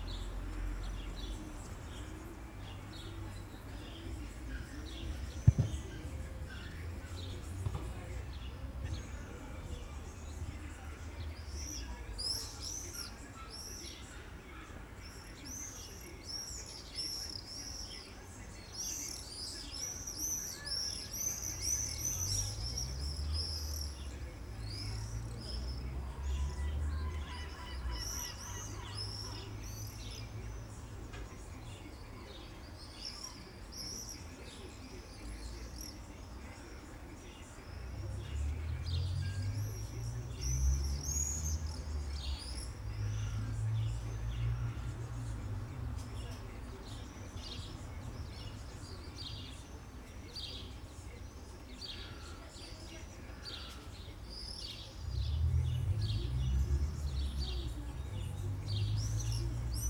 Tallinn, Oismae - saturday morning ambience
saturday morning soundscape in front of a building block in oismae, tallinn.